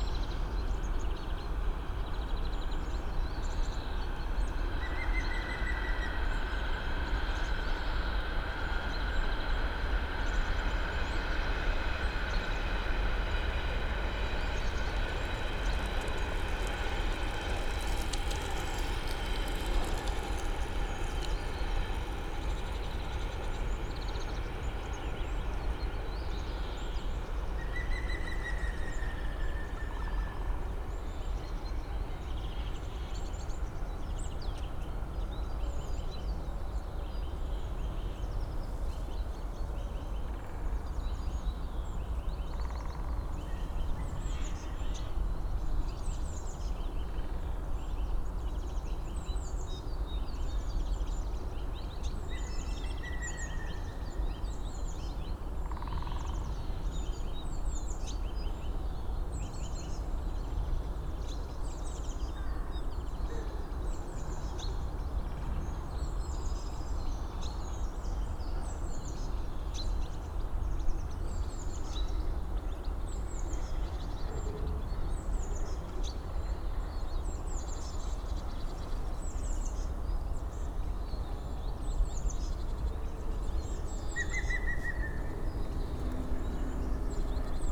Panketal, Berlin, Deutschland - suburb nature ambience
between Berlin Buch and Panketal, suburb morning ambience, at river Panke. Drone of distant traffic, trains, call of a green woodpecker (Picus viridis), some tits (Kohlmeise), green finchs (Grünfink) and others
(Sony PCM D50, DPA4060)
Berlin, Germany, 14 February, ~08:00